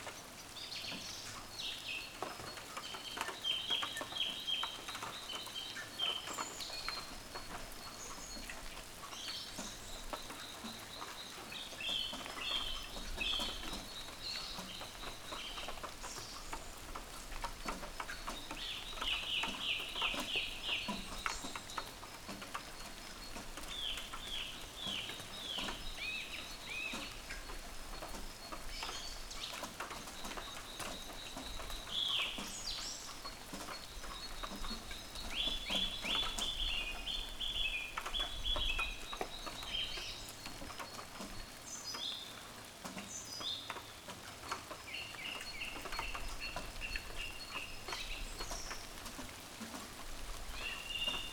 Nad Závěrkou, Praha, Czechia - Morning Rain and Birds from my Window
A dawn recording of birds and rain from my window overlooking a wooded park. The park is home to many doves, jays, magpies and numerous kinds of little birds I don’t know the names of.
Hlavní město Praha, Praha, Česká republika